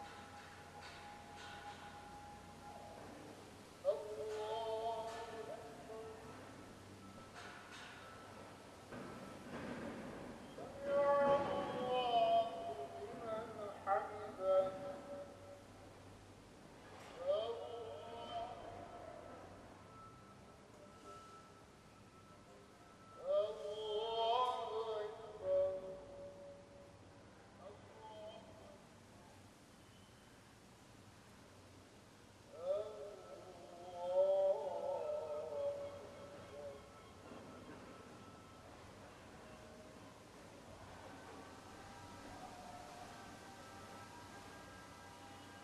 Muezzin of Kalenderhane Camii, Slowing down the rush
Afternoon ezan from this old mosque hidden behind decrepit city walls in Fatih. The muezzin is singing with a miraculous slowliness and procrastination, hereby countering the citys ubiquious rush.